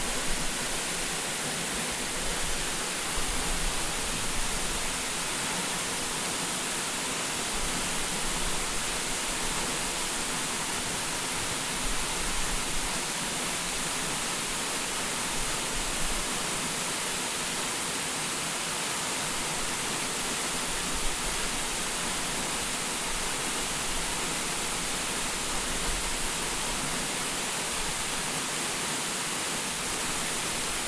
台灣宜蘭縣南澳鄉東岳村 - 新寮瀑布 xin liao waterfall